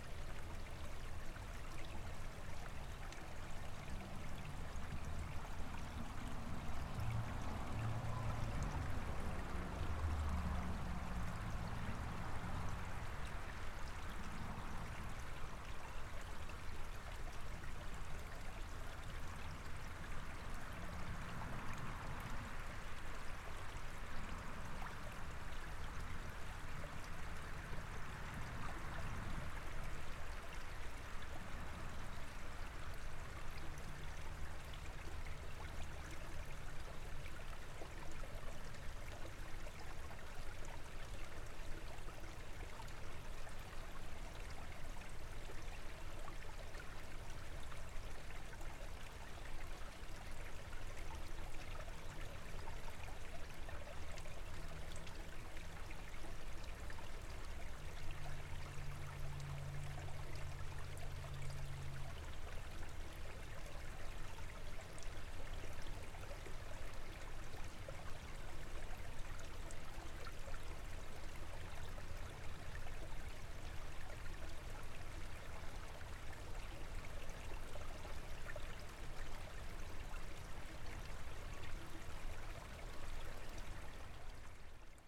Ambient recording from creek in Laumeier Sculpture Park.